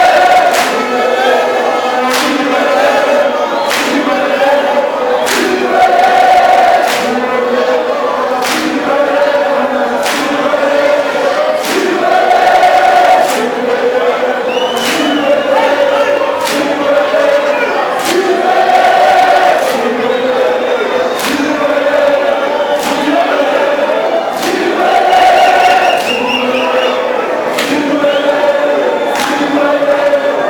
Free State Stadium Bloemfontein, Bloemfontein Celtic (Siwelele) fans sing
Bloemfontein Celtic (Siwelele) football supporters singing in Stadium